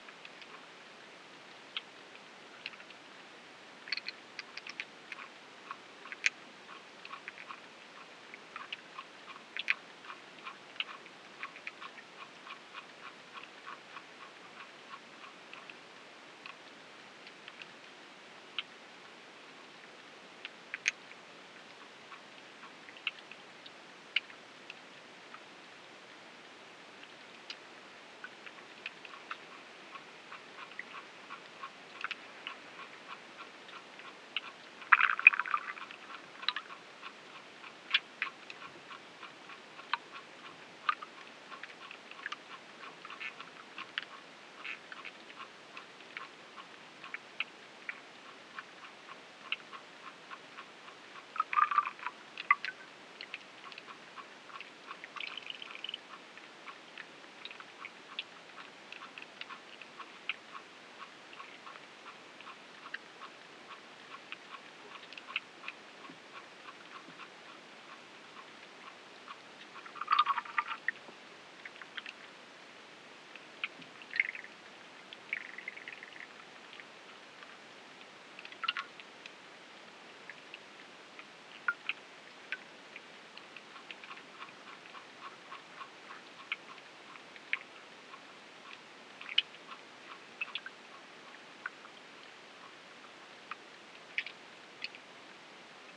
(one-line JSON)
{"title": "SBG, Gorg Negre, Obaga del Pujol - Charco", "date": "2011-07-17 17:55:00", "description": "Actividad en un charco a los pies de la pared de roca.", "latitude": "42.01", "longitude": "2.18", "altitude": "665", "timezone": "Europe/Madrid"}